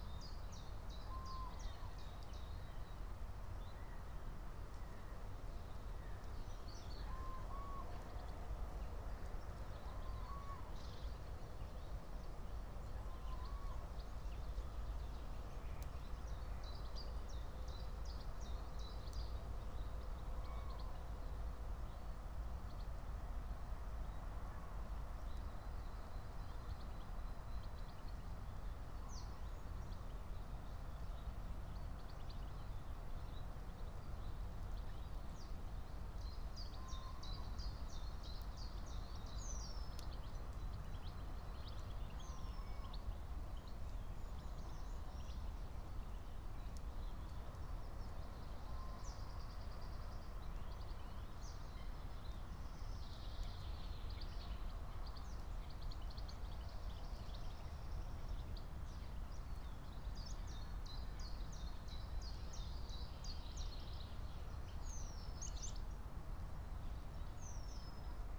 09:29 Berlin Buch, Lietzengraben - wetland ambience. Bird pulling fake fur from the microphone's wind protection.
16 April 2022, ~09:00